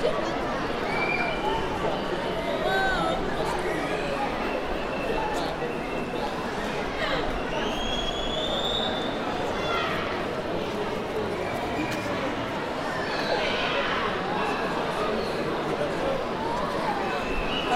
Taranto, Italy - Rally against industrial pollution
Public rally against the pollution created by the ILVA steelworks and ENI petrochemical plant and its link with the rising cases of cancer among the population. The two factories occupies an area that is approximately twice the one occupied by the nearby city of Taranto. This rally was one of the firsts after years of silent witnessing.
Recorded with Zoom H4N